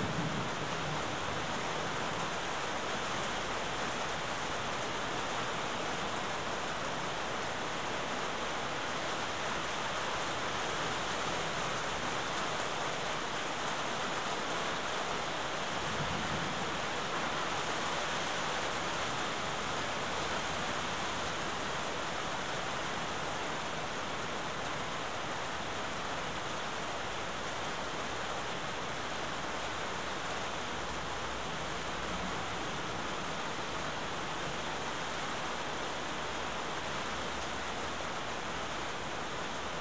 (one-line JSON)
{"title": "Takano, Ritto, Shiga Prefecture, Japan - Thunder Shower", "date": "2014-07-18 18:21:00", "description": "Evening thunder shower in my neighborhood.", "latitude": "35.03", "longitude": "136.02", "altitude": "109", "timezone": "Asia/Tokyo"}